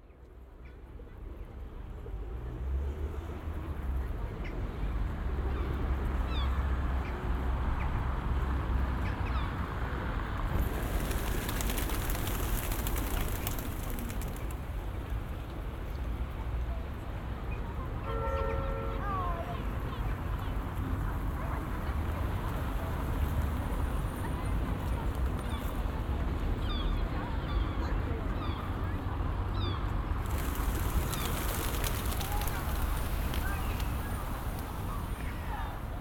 {"title": "Słupsk, Polska - binaural record from pl.Zwyciestwa in Słupsk", "date": "2015-04-08 14:57:00", "latitude": "54.47", "longitude": "17.03", "altitude": "21", "timezone": "Europe/Warsaw"}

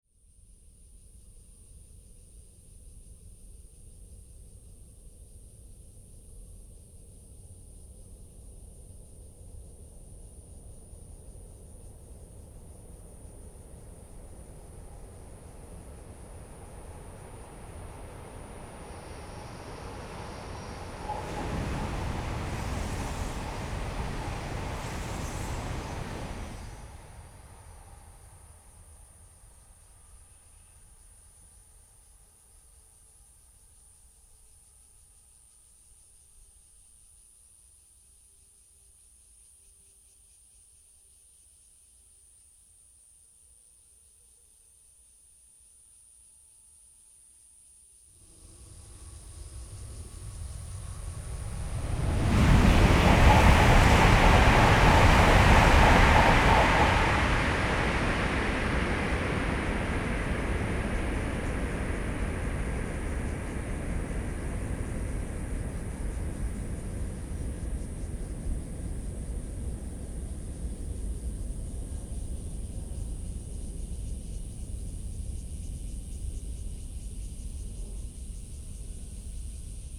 {"title": "Ln., Sec., Yimin Rd., Xinpu Township - High speed railway", "date": "2017-08-17 08:27:00", "description": "Near the tunnel, birds call, Cicadas sound, High speed railway, The train passes through, Zoom H6", "latitude": "24.84", "longitude": "121.05", "altitude": "59", "timezone": "Asia/Taipei"}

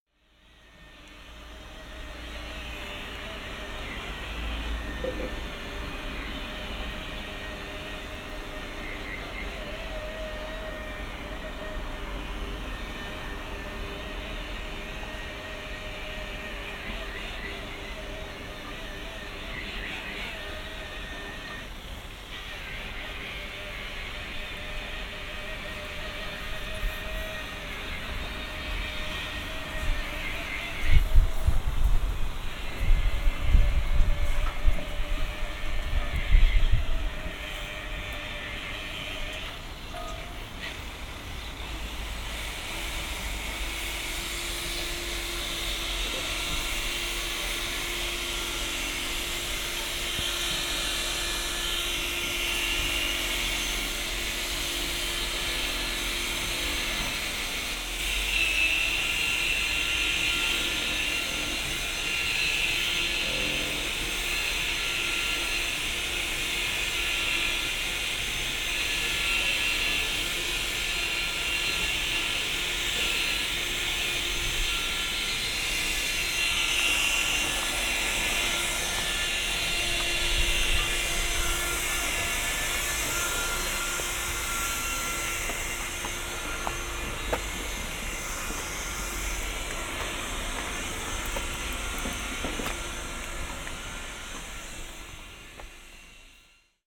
{"title": "Marina, Zaton, Croatia - (787 BI) Boat grinding", "date": "2021-05-22 15:38:00", "description": "Binaural recording of motorboat bottom grinding at Marina's parking lot.\nRecorded with Sennheiser Ambeo Smart Headset on iPhone 12 pro, app: Twisted Recorder.", "latitude": "43.78", "longitude": "15.83", "altitude": "9", "timezone": "Europe/Zagreb"}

